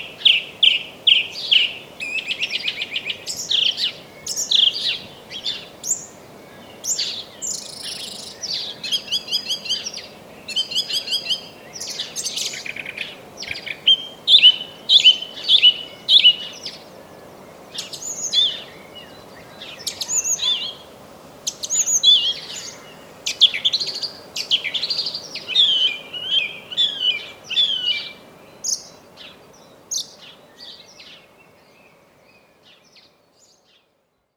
Many birds today, Zoom H6 + Rode NTG4+